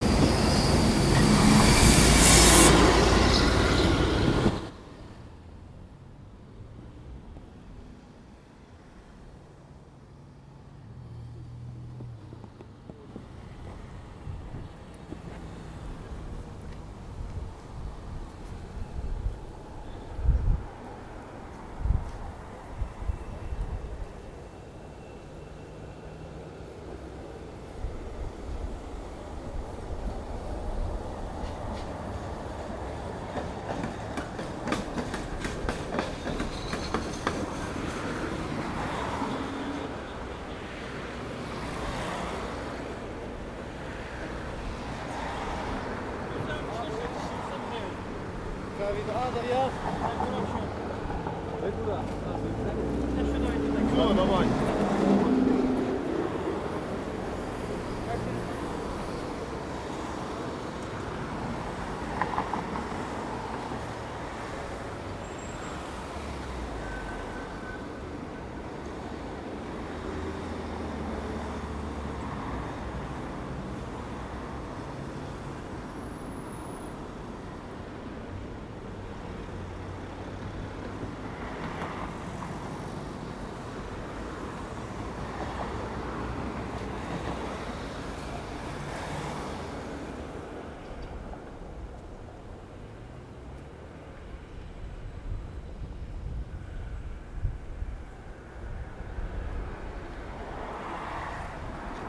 Ukraine / Vinnytsia / project Alley 12,7 / sound #9 / Central bridge